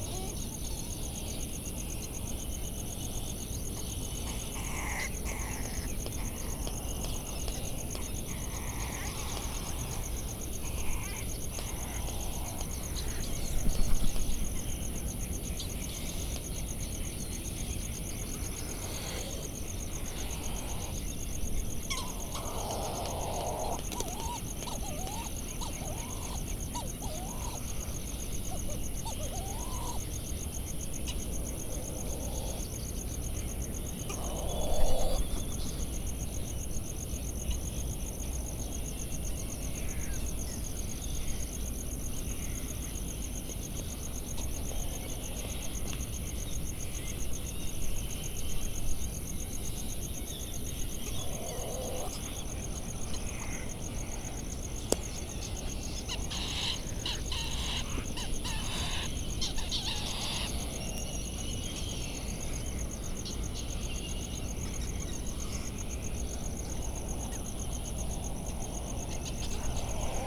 United States Minor Outlying Islands - Bonin Petrel soundscape ...
Sand Island ...Midway Atoll ... Bonin Petrel calls and flight calls ... recorded in the dark sat on the path to the All Hands Club ... lavalier mics either side of a fur covered table tennis bat ... mini jecklin disk ... calls and bill clappering from laysan albatross ... calls from black noddy and white terns ... cricket ticking the seconds ... generators kicking in and out ...